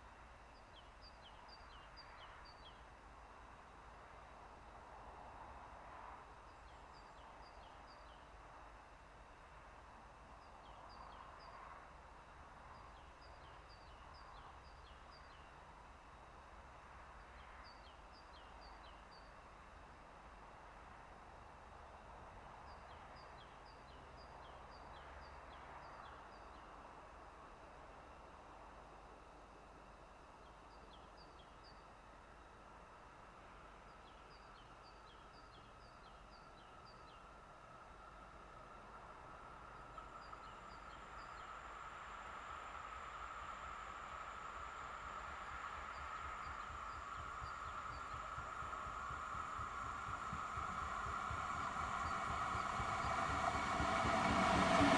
Birds singing, natural gas reduction station drone, cars droning in the distance, passenger train passing by to enter Koprivnica train station. Recorded with Zoom H2n (MS, on a tripod).